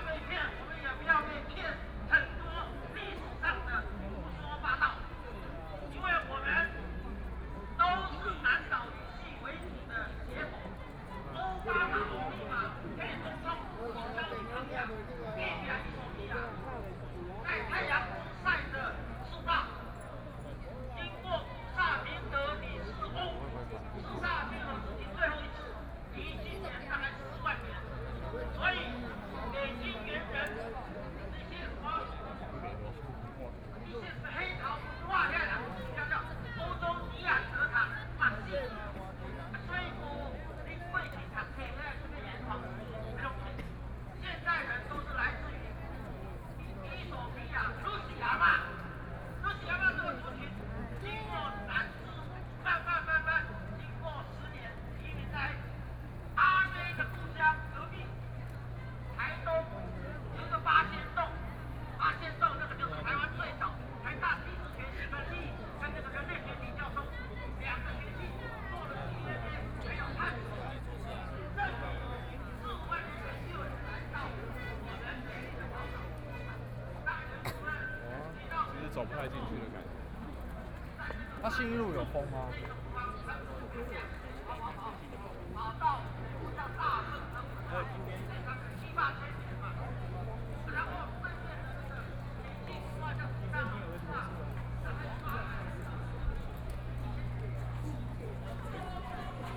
Zhongshan S. Rd., Taipei City - Protest
Sunflower Movement, More than fifty thousand people attended, All the streets are packed with people nearby